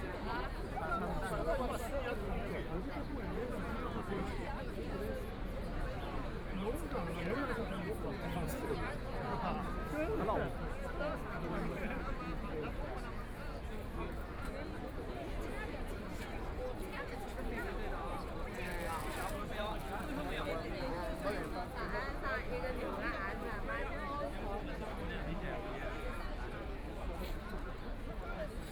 in the Square of the Park gathered a lot of people, Blind message Share, Binaural recording, Zoom H6+ Soundman OKM II
Shanghai, China